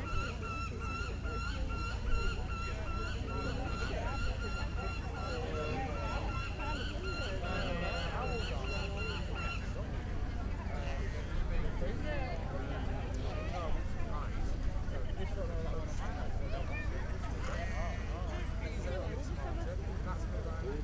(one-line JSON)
{"title": "Red Way, York, UK - Farndale Show Soundscape ...", "date": "2018-08-27 10:50:00", "description": "Farndale Show Soundscape ... sounds from the show ground ... stood close to a falconry display team ... lavalier mics clipped to baseball cap ... the bird calling is a lanner saker peregrine hybrid ... voices ... public address system ... dogs ... all sorts of everything ... etc ...", "latitude": "54.37", "longitude": "-0.97", "altitude": "152", "timezone": "GMT+1"}